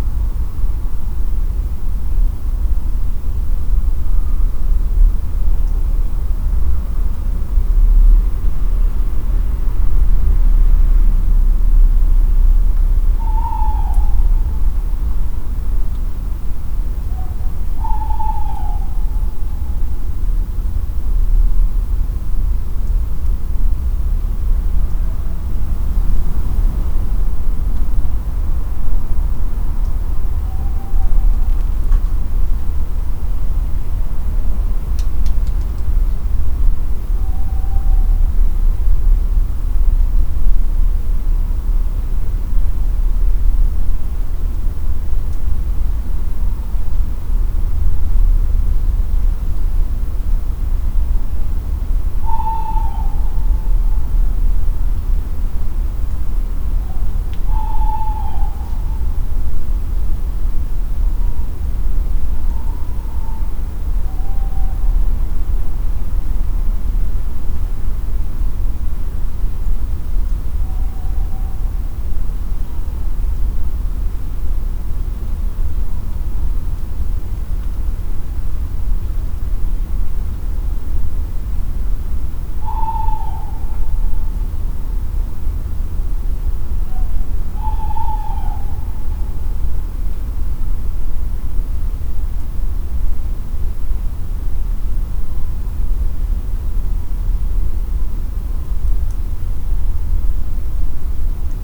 2am and the owls are calling again. It seems OK but not perfect.
West Midlands, England, United Kingdom, October 2021